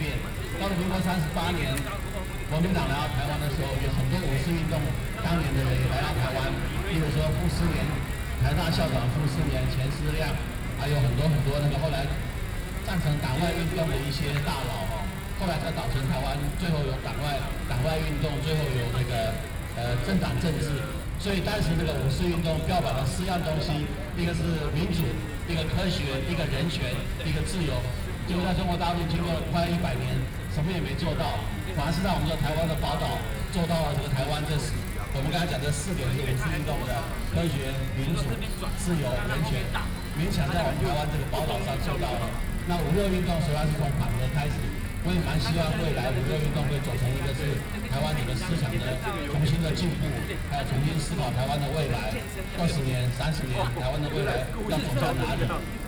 Liberty Square, Taipei - No Nuke
Opposed to nuclear power plant construction, Binaural recordings, Sony PCM D50 + Soundman OKM II
台北市 (Taipei City), 中華民國, 3 May 2013